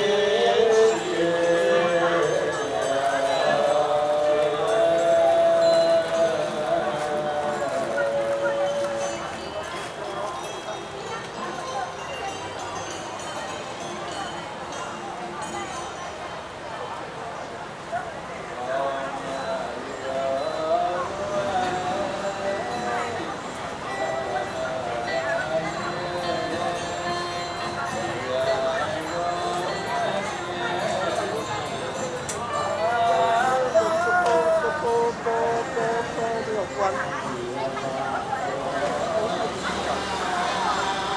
No., Dongmen Street, East District, Hsinchu City, Taiwan - Ghost Month at Dong Ning Temple
Singing and music as heard from a distance, while walking around the south-east corner of Dong Ning Temple. A crowded place on the third day of Ghost Month. Stereo mics (Audiotalaia-Primo ECM 172), recorded via Olympus LS-10.
臺灣省, 臺灣, 23 August 2019